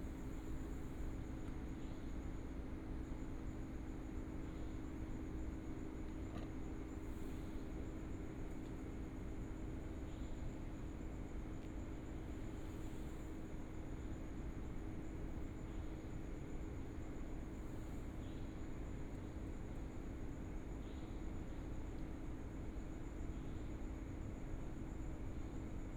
全家超商-枋寮臨海店, Fangliao Township - At the junction
Late night on the street, Traffic sound, In front of the convenience store
Fangliao Township, Pingtung County, Taiwan